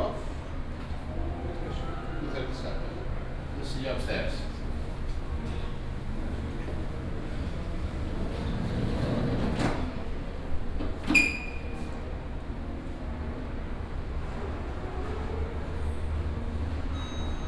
Interconti, Neos Kosmos, Athens - hotel ambience, walking
short walk in the Intercontinetal Hotel lobby
(Sony PCM D50, OKM2)
6 April 2016, 20:25